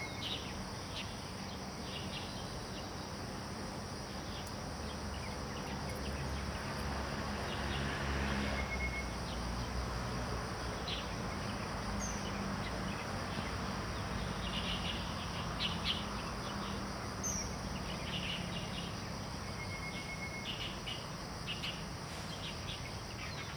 Taomi River, 南投縣埔里鎮桃米里 - Birds singing
Birds singing
Zoom H2n MS+XY
Puli Township, 桃米巷29-6號, 7 October 2015, 06:30